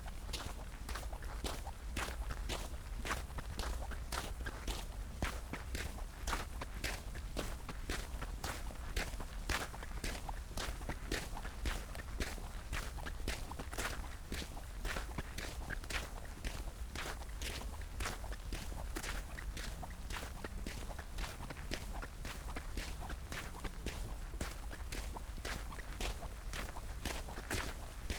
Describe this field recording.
Berlin Karow, walking along river Panke, snow in the air and on the ground, (Sony PCM D50, DPA4060)